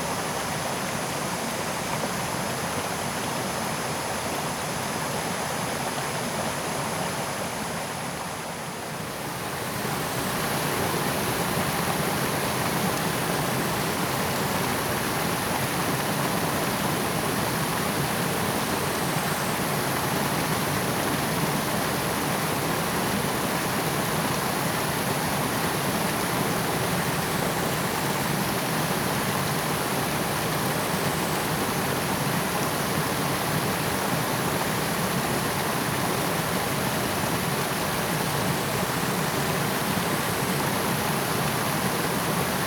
種瓜坑溪, 埔里鎮 Nantou County - the river
Sound of water
Zoom H2n MS+XY
Puli Township, Nantou County, Taiwan, June 2016